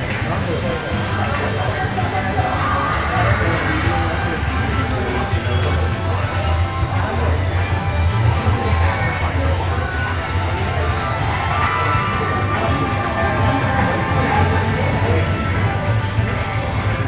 takasaki, store, manga department
inside a big store in the manga department movie and game automats sounds everywhere
international city scapes - social ambiences
22 July, 12:12